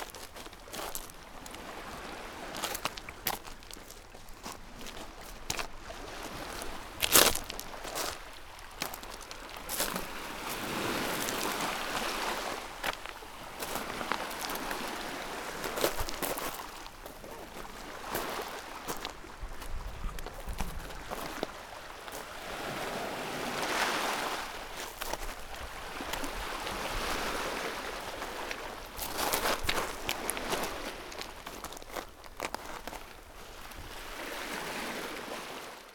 Ajia Rumeli, Crete, shore of the Libyan Sea - walking on pebbels
stepping on pebbles on the shore, nice rattle
Platanias, Greece, 29 September 2012